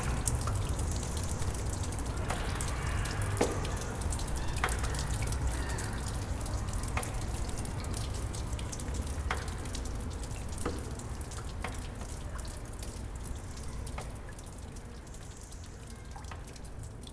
Ruissellement dans la grotte des Buttes Chaumont, oiseaux, circulation
Water dripping in the grotto of Buttes Chaumont, birds, traffic
Recorded with a Zoom H4n